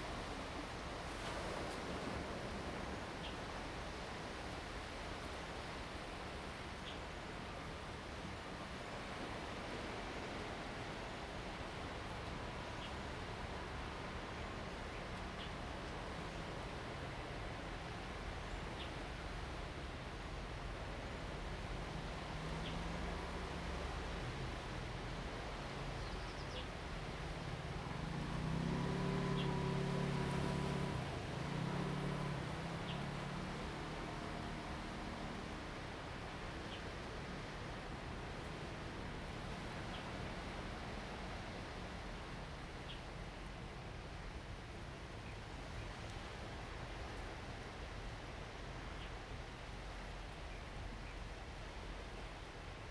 On the bank, Sound of the waves, Birds singing
Zoom H2n MS+XY
Pingtung County, Taiwan, 2 November 2014, ~08:00